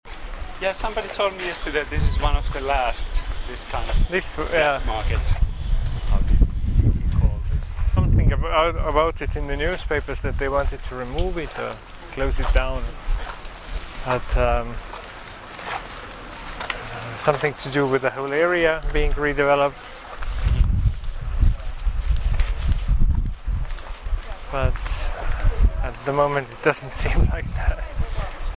conversation with Andres Kurg and Ossi Kajas about history and trajectory of Baltijaam market